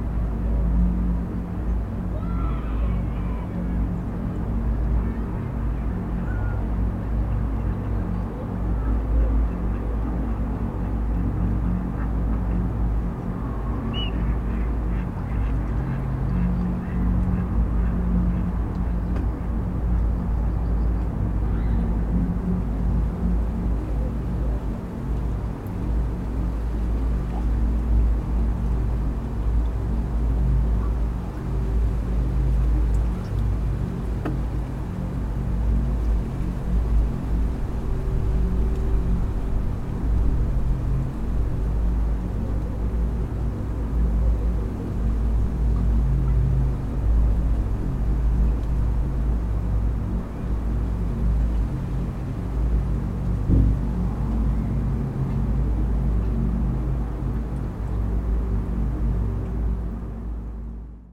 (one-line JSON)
{"title": "Lai, Pärnu, Pärnu maakond, Eesti - Inside the pipe of the concrete block", "date": "2019-10-11 14:30:00", "description": "Inside the pipe of the concrete block. On the bank of the Pärnu river. Some children play nearby. Weather was quite stormy. Mic was placed in the pipe. Recorder: Zoom H6, MSH-6 mic capsule", "latitude": "58.39", "longitude": "24.50", "altitude": "1", "timezone": "Europe/Tallinn"}